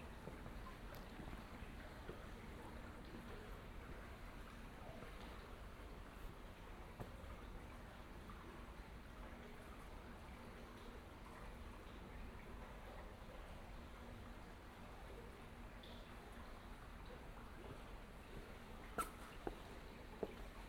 Aarau, center, night, Schweiz - nacht3
One is looking for cigarettes, the walker comes home...